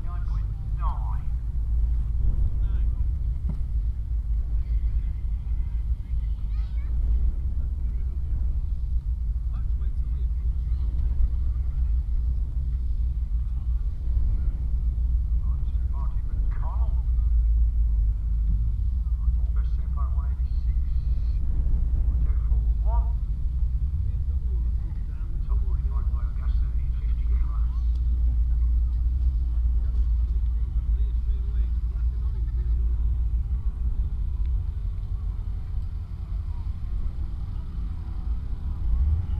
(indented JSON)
{
  "title": "Glenshire, York, UK - Motorcycle Wheelie World Championship 2018 ...",
  "date": "2018-08-18 14:00:00",
  "description": "Motorcycle Wheelie World Championship 2018 ... Elvington ... Standing start 1 mile ... open lavalier mics clipped to sandwich box ... very blustery conditions ... positioned just back of the timing line finish ... all sorts of background noise ...",
  "latitude": "53.93",
  "longitude": "-0.98",
  "altitude": "16",
  "timezone": "Europe/London"
}